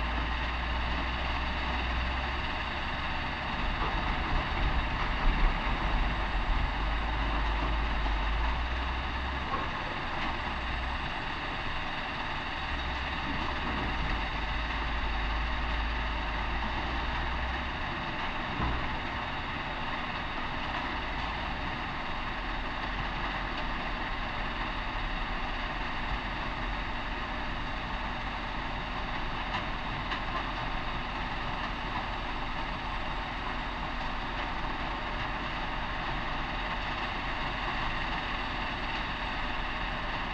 Via Trieste, Savogna DIsonzo GO, Italy - Quarry Devetachi
Quarry devetachi, crushing stones, trucks bring in new material.
Recorded with LOM Uši Pro, AB Stereo Mic Technique, 50cm apart.
Cava Devetachi
UTI Carso Isonzo Adriatico / MTU Kras Soča Jadran, Friuli Venezia Giulia, Italia, 23 December 2020